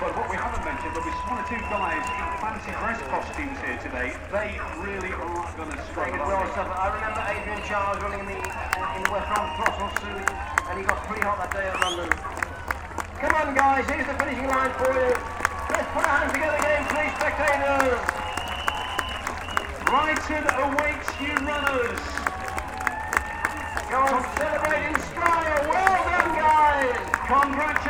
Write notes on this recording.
The finishing line at the first Brighton Marathon. A slightly irritating event commentator!